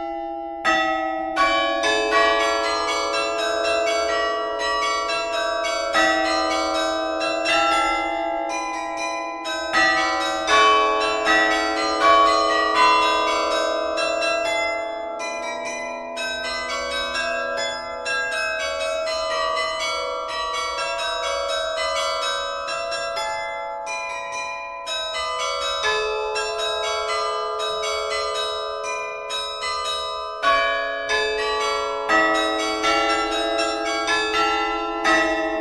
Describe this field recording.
The Huy jingle played automatically on bells every hour.